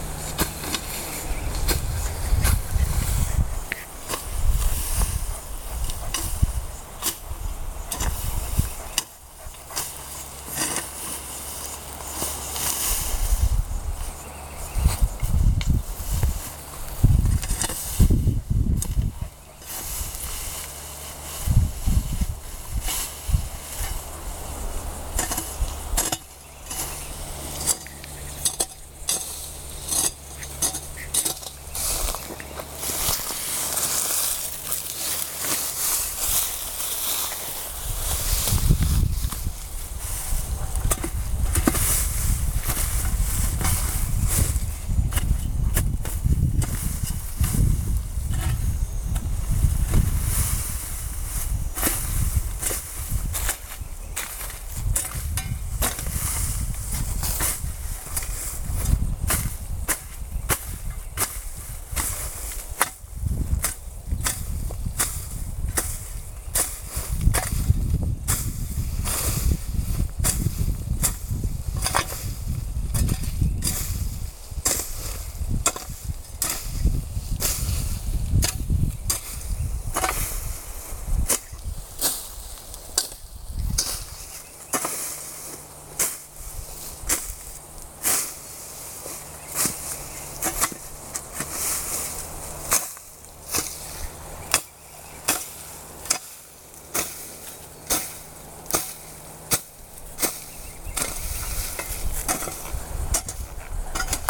Sound of hoe cleaning weeds, São Sebastião da Grama - SP, Brasil - Sound of hoe cleaning weeds
Paisagem Sonora:
This soundscape archive is supported by Projeto Café Gato-Mourisco – an eco-activism project host by Associação Embaúba and sponsors by our coffee brand that’s goals offer free biodiversity audiovisual content.
Recorded with a Canon DlSR 5d mark II
We apreciare a lot your visit here. Have fun! Regards
Região Sudeste, Brasil, 17 February 2022, 14:30